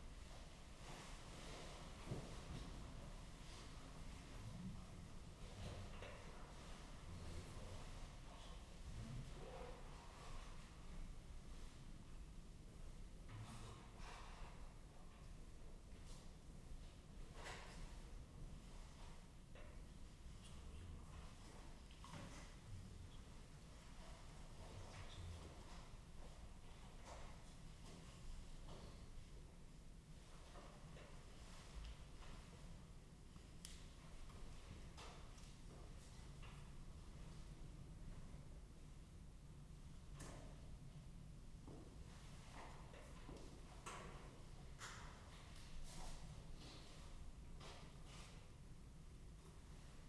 Lewins Ln, Berwick-upon-Tweed, UK - inside the church of St Mary the Virgin ...
inside the church of St Mary the Virgin ... Lindisfarne ... lavalier mics clipped to sandwich box ... background noise ...